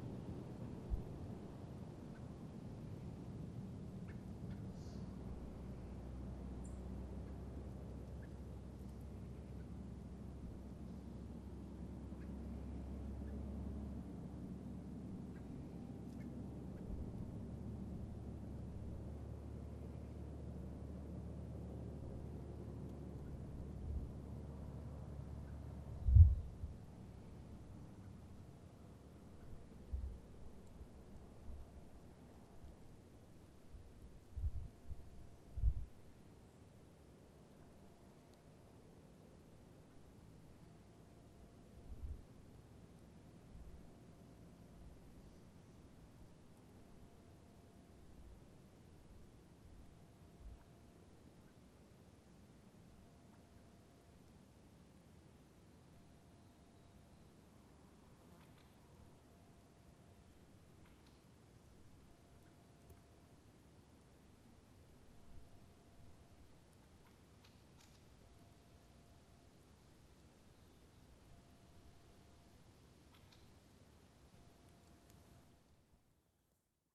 Birds and insects in the wood. This was not recorded on the soundwalk on the World Listening Day, but the day after the 19th july. The WLD was rather windy so I want to include one recording with less surrounding wind in this collection, just to give an idea. The wind is really changing all the soundscape in the woods and surroundings.